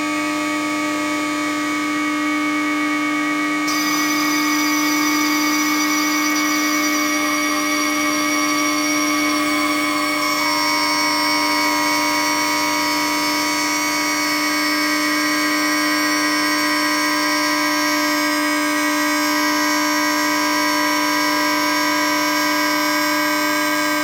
Ixelles, Belgium - Electromagnetic travel
Electromagnetic travel inside a train, recorded with a telephone coil pickup stick on the window. Train waiting in the Bruxelles-Luxembourg station, and going threw the Bruxelles-Schuman station.